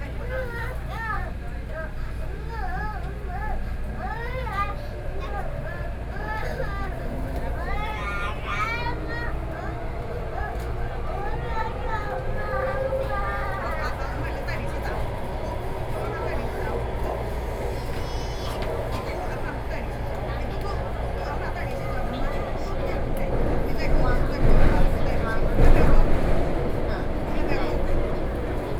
Taipei, Taiwan - Crying child
Crying child, Inside the MRT train, Sony PCM D50 + Soundman OKM II
新北市 (New Taipei City), 中華民國, 25 May 2013, 10:37